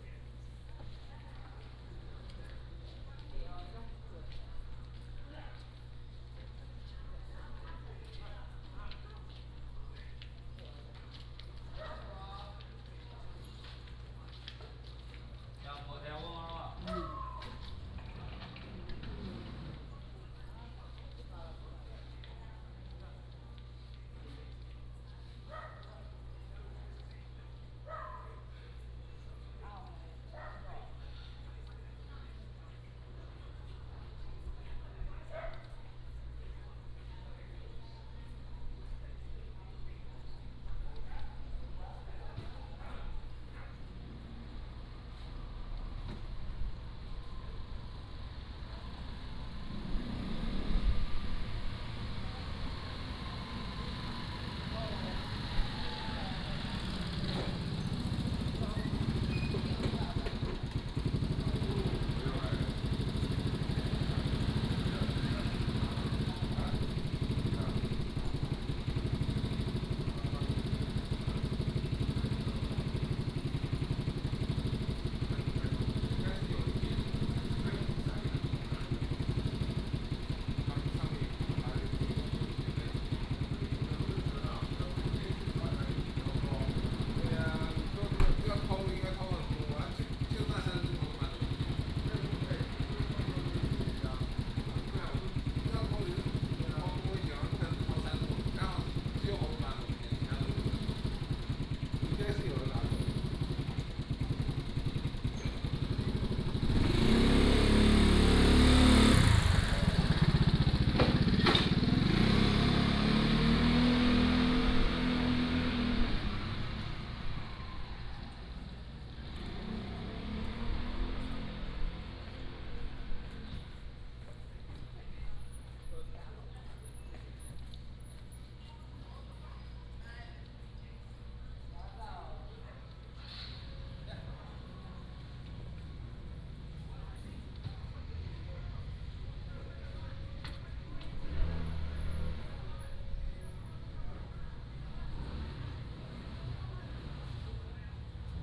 塘岐村, Beigan Township - In the Street
In the Street, A small village
福建省, Mainland - Taiwan Border